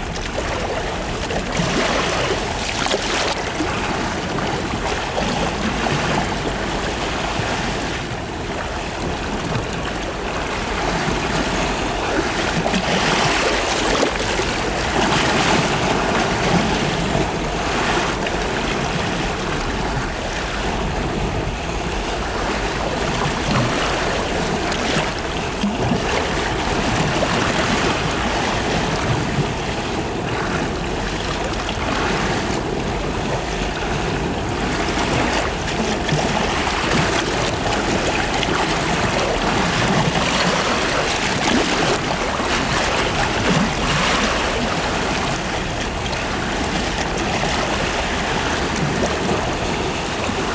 {
  "title": "Rostrevor, UK - Carlingford Lough Tide After the Harvest Super Moon",
  "date": "2016-10-17 10:30:00",
  "description": "Recorded with a pair of DPA 4060s and a Marantz PMD661.",
  "latitude": "54.10",
  "longitude": "-6.20",
  "altitude": "96",
  "timezone": "Europe/London"
}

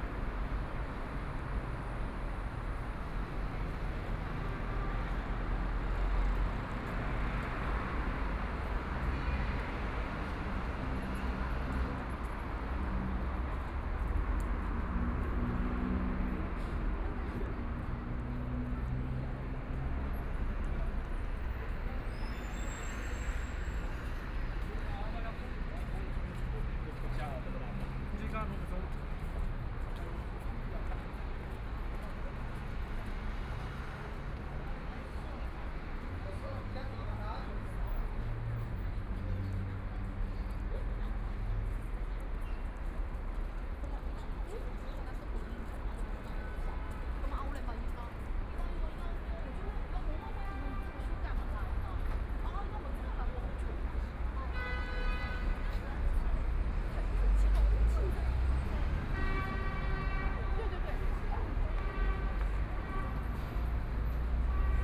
{
  "title": "Zhangyang, Shanghai - Noon time",
  "date": "2013-11-21 12:13:00",
  "description": "Noon time, in the Street, Walking through a variety of shops, Road traffic light slogan sounds, Traffic Sound, Binaural recording, Zoom H6+ Soundman OKM II",
  "latitude": "31.23",
  "longitude": "121.51",
  "altitude": "23",
  "timezone": "Asia/Shanghai"
}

